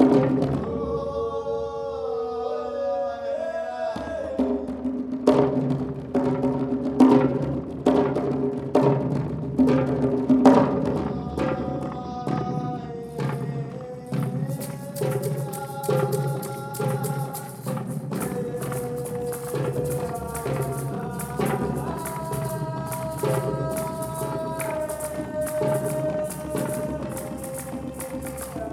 Under the GW Parkway on Teddy Rosevelt - Drums, Ocarina, Call/Resp Improv #1
Instruments: Boucarabou (Senegal), Darbuka (Moroccan), Ocarina (Ecuador). Recorded on DR-40